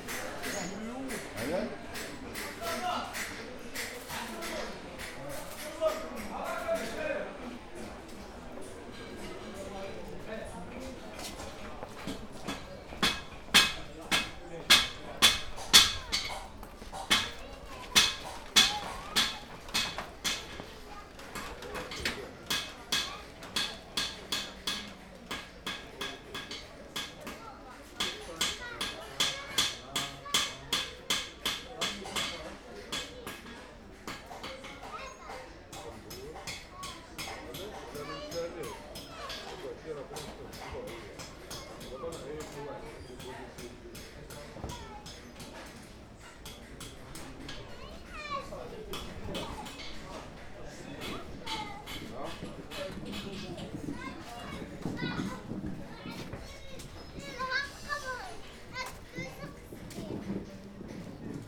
derb Anboub. Quartier Barroudyine. Médina، Marrakesh, Marokko - street corner near metal workers
leaving the metalworkers' area, stand on a street corner in front of a drugstore and a boutique with stuff. Recorded with Sony PCM D-100 with built-in microphones.